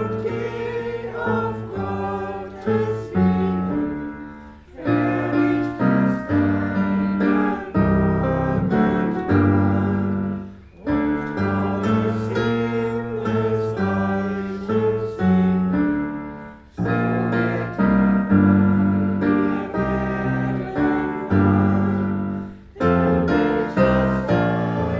The congregation sings. A potpourri of accidental guests and interested church visitors. Piano is played by a Korean looking church dekan. Recorded with ZoomH4N
Giessen, Germany, 13 June 2014